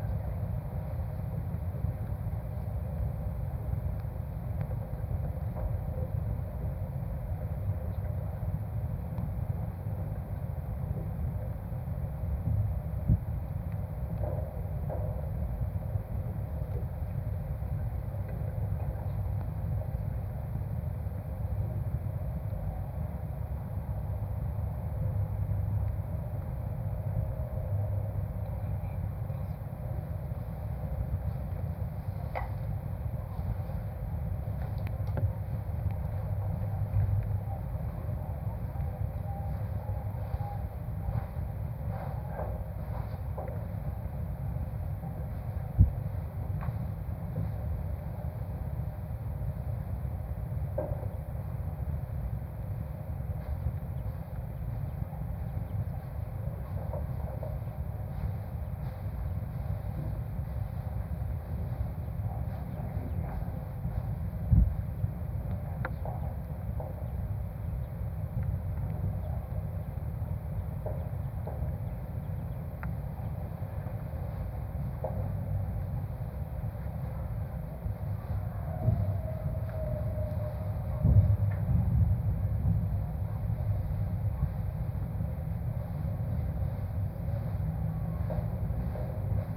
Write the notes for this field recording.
abandoned wooden warehouse. windy day. placed my contact micros on some wooden beam holding the roof.